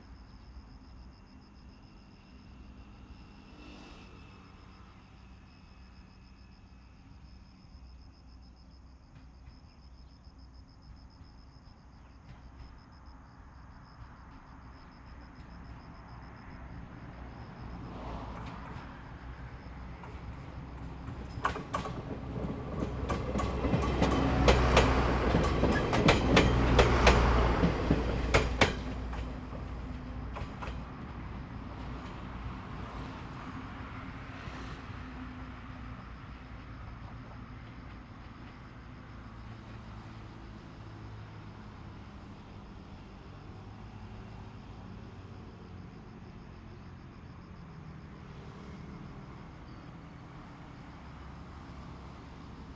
{
  "title": "Sec., Zhongfeng Rd., Hengshan Township - on the rail side",
  "date": "2017-08-30 08:08:00",
  "description": "on the rail side, Opposite the dog, The train passes by, Zoom H2n MS+XY",
  "latitude": "24.72",
  "longitude": "121.11",
  "altitude": "151",
  "timezone": "Asia/Taipei"
}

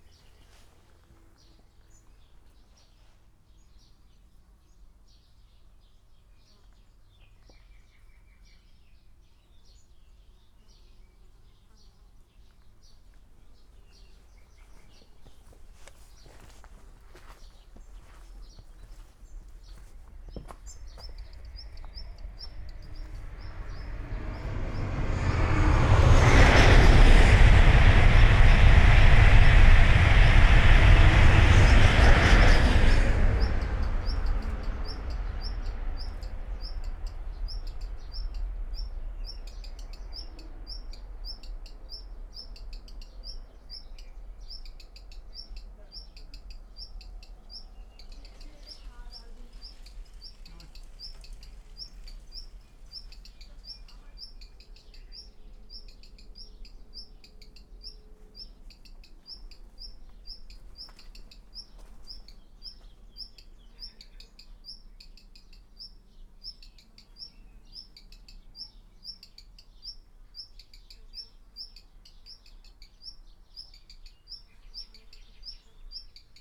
{
  "title": "Schönhausen (Elbe), station - walking around",
  "date": "2012-05-19 16:25:00",
  "description": "walking around the abandoned main station of Schönhausen. the station is functional, a regional train stops every 2 hours, but the station building is long closed and in a bad shape. the overall atmosphere ist interesting, high speed ICE trains to and from Berlin passing frequently, in between its quiet and deserted. a black redstart complains about my presence.\n(tech: SD702, DPA4060 binaural)",
  "latitude": "52.59",
  "longitude": "12.04",
  "altitude": "31",
  "timezone": "Europe/Berlin"
}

